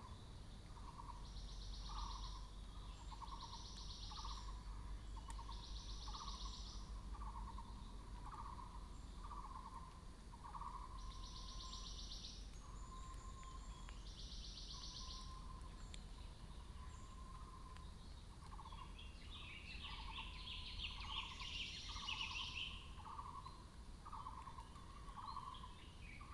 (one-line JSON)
{"title": "水上, 埔里鎮桃米里, Taiwan - in the woods", "date": "2016-04-19 06:45:00", "description": "Bird sounds, in the woods", "latitude": "23.94", "longitude": "120.92", "altitude": "542", "timezone": "Asia/Taipei"}